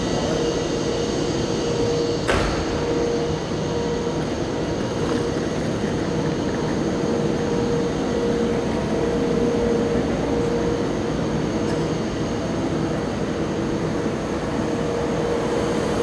Antwerpen, Belgique - SNCB Antwerpen Centraal Station
Soundscape of the Antwerpen Centraal station. In first, the very big cupola, with intense reverberation. After on the platform, a train leaving the station, to Breda in the Nederlands.
Antwerpen, Belgium, August 2018